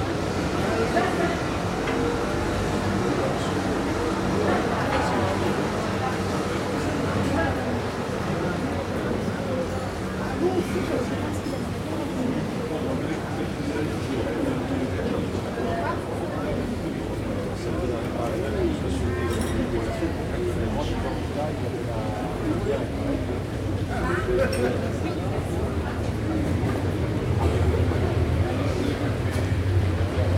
Fontaine de la Trinité, Pl. de la Trinité, Toulouse, France - coffee place
place, coffee, people talk, traffic, street, people walk